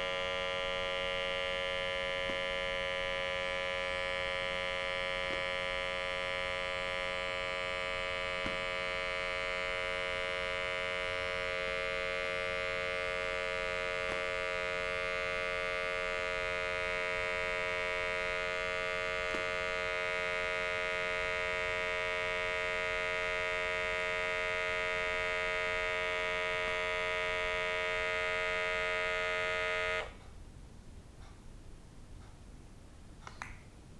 blood pressure meter for long term ECG
the city, the country & me: march 17, 2011
Berlin, Germany, March 2011